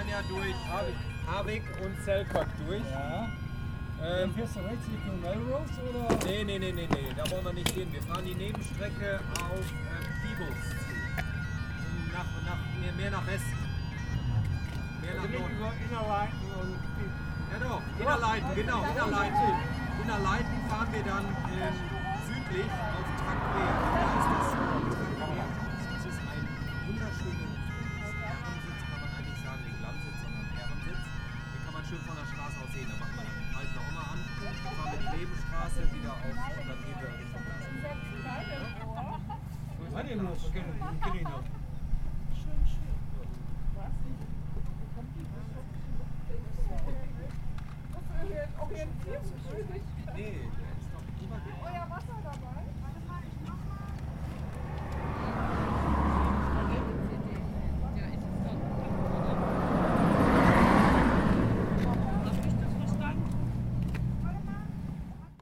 Windy hilltop viewpoint into Scotland, with bagpiper playing. German bikers discuss places they will visit in Border region. Burger van generator in background, some mic noise. Zoom H4N + windshield.
United Kingdom, European Union, 31 May 2013